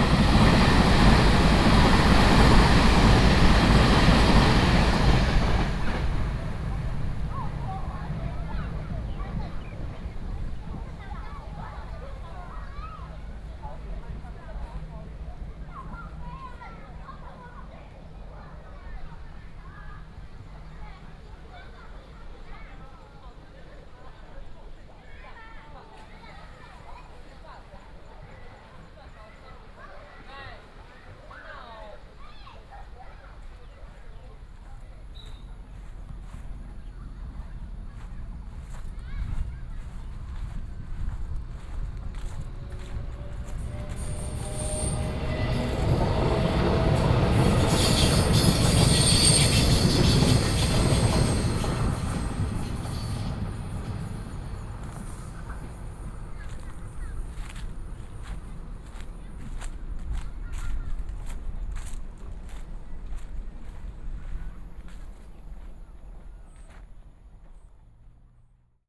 頭城鎮城北里, Yilan County - In Sports Park
In Sports Park, Birdsong, Very hot weather, Traveling by train, Child's voice
Yilan County, Taiwan, 7 July 2014, 15:46